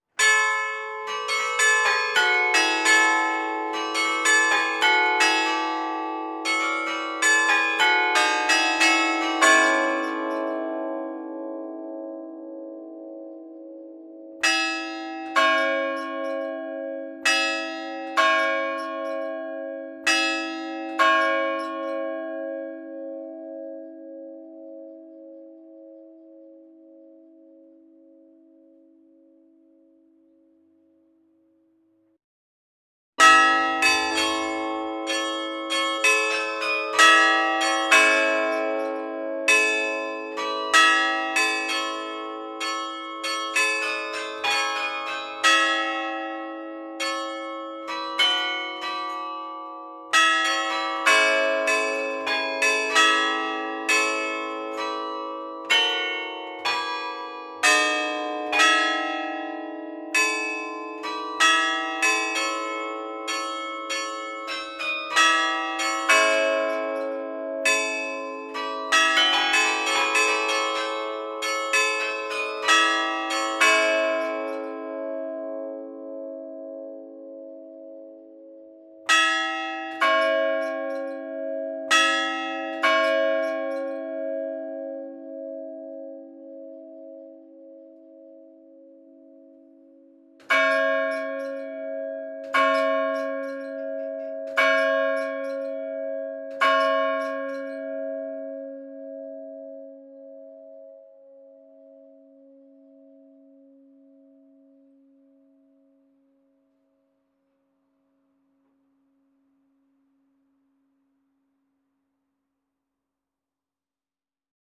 Rue de la Mairie, Douai, France - Douai (Nord) - Carillon du beffroi de l'hôtel de ville
Douai (Nord)
Carillon du beffroi de l'hôtel de ville
16h
France métropolitaine, France